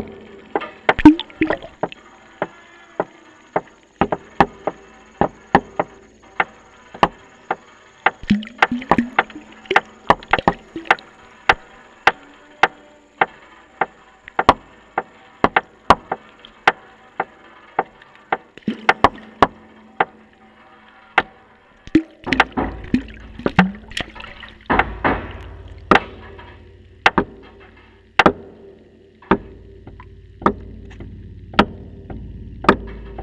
Corfu, Greece - Οur trip to Vidos Island
Recording of a piece made with a hydrophone in an old aqueduct by: Kostas, Mihalis, Eleni, Konstantina and Alex. 26/7/2022